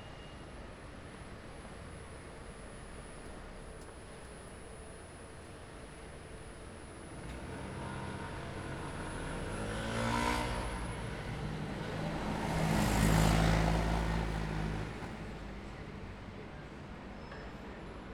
Trip - London Waterloo to Wigmore Hall - Trip from London Waterloo to Wigmore Hall
Start: Jubilee Line Northbound platform at London Waterloo underground station.
00:01:00 One train arrives and leaves
00:02:30 Another train comes. I get on.
00:04:27 Arrives at Westminster
00:05:20 Leaves Westminster
00:06:40 Arrives at Green Park
00:07:15 Leaves Green Park
00:08:30 Arrives Bond Street. I get off.
00:09:00 Another train arrives at the Southbound platform
00:09:30 Escalators (1)
00:10:15 Escalators (2)
00:11:00 Ticket barriers
00:11:22 Stairs to Oxford Street
00:11:45 Walk onto Oxford Street
00:12:30 Wait at crossing
00:13:05 Crossing beeps. I don't cross.
00:14:00 I cross
00:14:10 Walk down the side of Debenhams
00:15:00 Walking down Marylebone Lane, Henrietta Place, Welbeck Street
00:16:00 Crossing Wigmore Street to Wigmore Hall